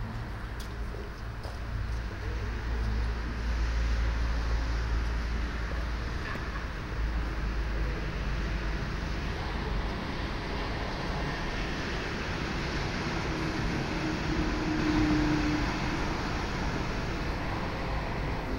evening time in the city party zone on hohenzollernring, drunken young men sing simple song
soundmap nrw: social ambiences/ listen to the people in & outdoor topographic field recordings